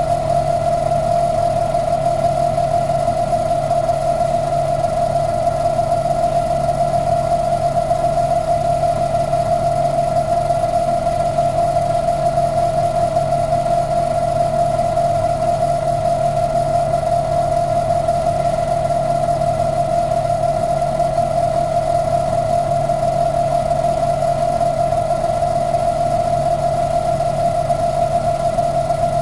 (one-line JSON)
{"title": "Geležinkelio g., Vilnius, Lithuania - LED information board hum", "date": "2021-01-30 17:00:00", "description": "Resonant hum of an LED board in a subterranean passage underneath Vilnius train station. Recorded from a point-blank distance with ZOOM H5.", "latitude": "54.67", "longitude": "25.28", "altitude": "145", "timezone": "Europe/Vilnius"}